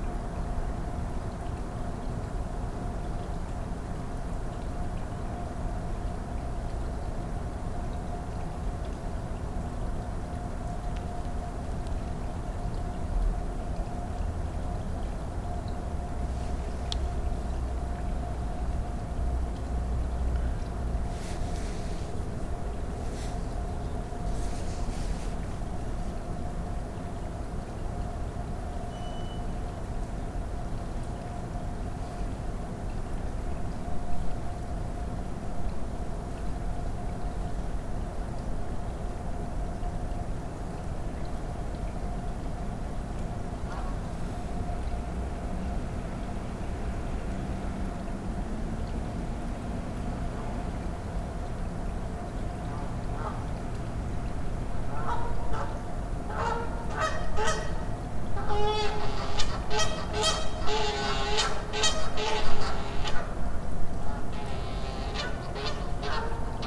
The College of New Jersey, Pennington Road, Ewing Township, NJ, USA - Sylvia Lake
Recorded using Audio-Technica USB Microphone.
17 March 2014, 21:25